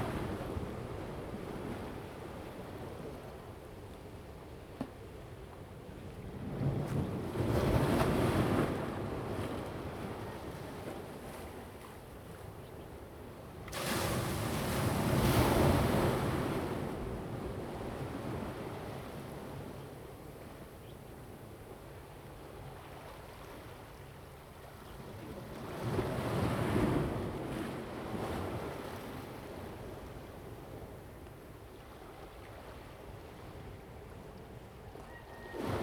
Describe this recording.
Sound of the waves, Chicken sounds, Zoom H2n MS+XY